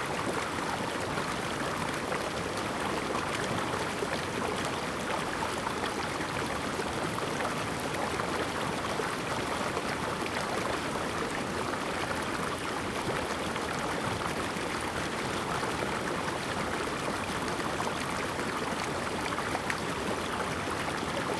初英親水生態公園, 南華村 - Streams
Streams of sound, Hot weather
Zoom H2n MS+XY